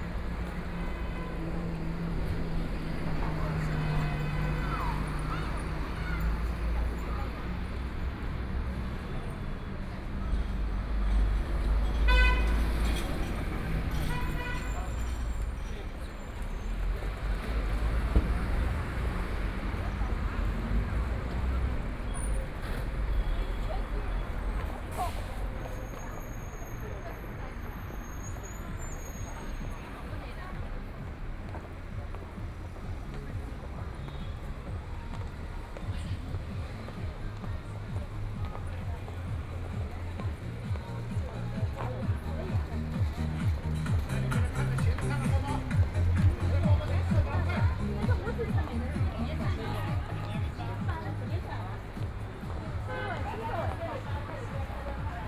East Nanjin Road, Shanghai - Various sounds on the street
The crowd, Bicycle brake sound, Trumpet, Brakes sound, Footsteps, Traffic Sound, Binaural recording, Zoom H6+ Soundman OKM II
25 November 2013, 16:18